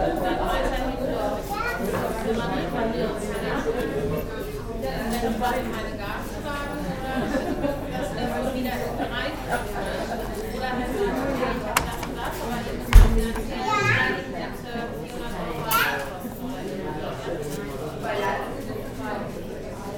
cologne, körnerstraße, cafe sehnsucht
inside cafe sehnsucht on an afternoon - busy talking, steps and coffe machine sounds, a child
soundmap nrw - social ambiences and topographic field recordings